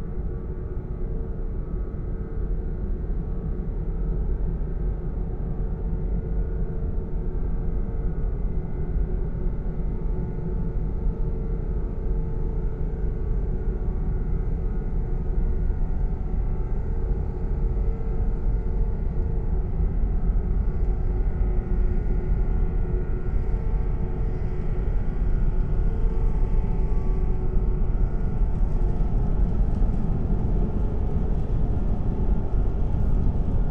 Le Port, Le Havre, France - The enormous lock
The François Premier bridge is an enormous lock. The moving part weights 3300 tons. Here during the recording, a gigantic boat is passing by, the lock is open. It's the Grande Anversa from Grimaldi Lines, which weights 38.000 tons.